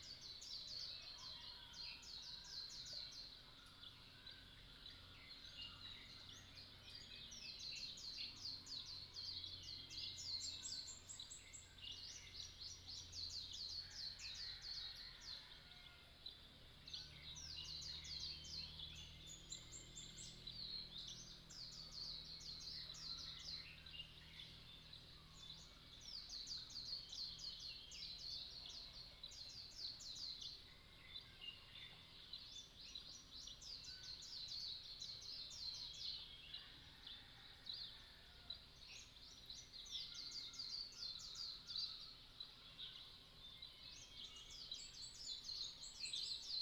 綠屋民宿, Puli Township - Early morning
Early morning, Chicken sounds, Birdsong, Dogs barking, at the Hostel
29 April, 05:28